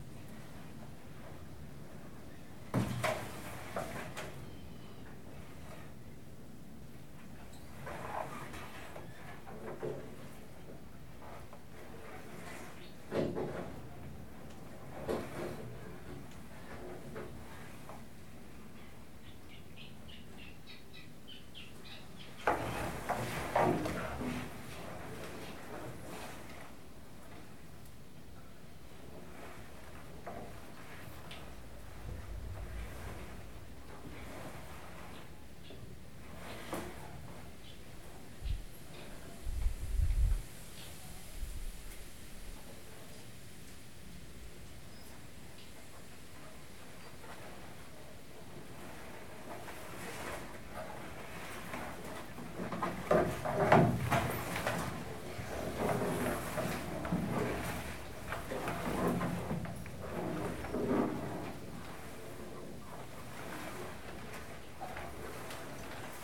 Lac de Rillé, Rillé, France - Willow on tin
In a bird hide (observatoire) under a willow tree, the wind was pushing the willow branches across the corrugated tin roof and wooden sides of the hut. The resulting sound is quite irregular and difficult to visualise.
In the background you can also hear some birds squeaking.
Recorded on a zoom H4n internal mics.
5 July 2015, 11:35